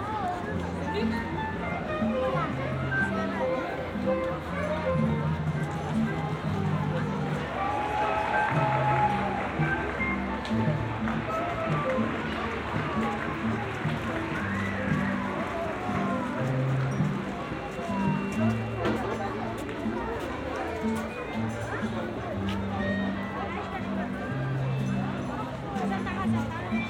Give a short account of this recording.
summer party in the garden of Nachbarschaftshaus (neighbourhood house), people of all ages from the neighbourhood gather here, the building also hosts a kindergarden. (tech: Sony PCM D50 + Primo EM172)